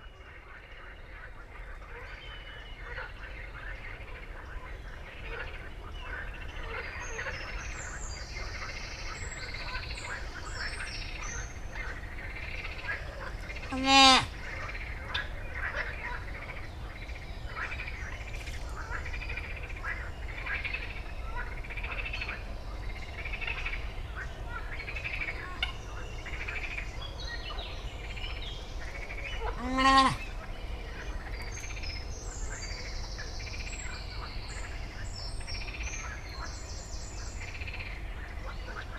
Base de sports et loisirs des Quinze sols, Zone de Loisirs des Quinze Sols, Blagnac, France - Ragondins et batraciens à la ripisylve #1
Très rapidement, après avoir posé ce piège à son pour la nuit, la vie sauvage de ce petit paradis de nature (en pleine Métropole toulousaine... sonouillard oblige...) reprend. Il n'aura pas été vain de venir en repérage quelques jours plutôt sans laisser les micros pour décider du meilleur endroit pour le faire. Et, en effet, les petites boules de poils que j'avais entrevu nager en nombre à la surface de l'étang, n'auront pas manqué de faire entendre leurs drôles de voix, pleine de candeur et d'émotion.
Usi Pro (AB) + Zoom F8
France métropolitaine, France, 25 May 2020